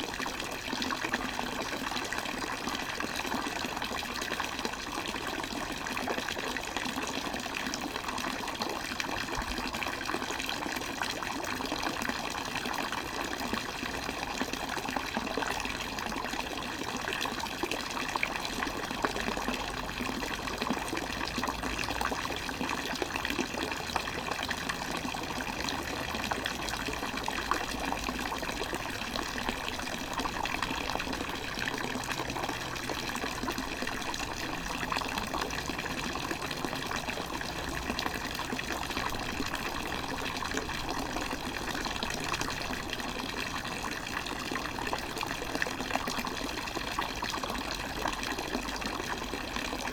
Weimar, small fountain at Frauenplan square. Also a distant drone of unclear origin can be heard.
(Sony PCM D50)
Weimar, Germany